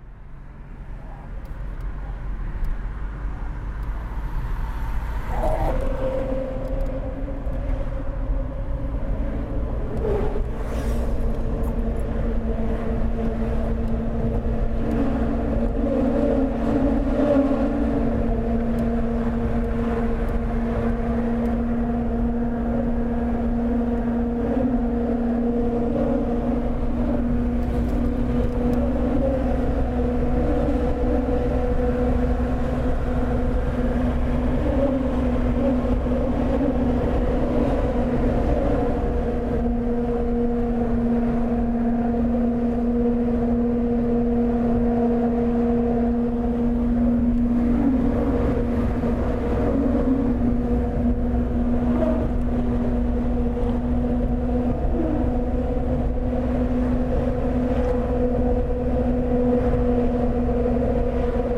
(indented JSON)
{
  "title": "Lisboa, Portugal - 25 de Abril Bridge singing with the cars",
  "date": "2014-11-01 19:58:00",
  "description": "My girlfriend driving and I am by her side recording the resonant ambient of the bridge made by the cars.\nI used the MS mic of my ZOOM H6.\nThe audio footage is RAW, only have a fade in and out.",
  "latitude": "38.69",
  "longitude": "-9.18",
  "altitude": "1",
  "timezone": "Europe/Lisbon"
}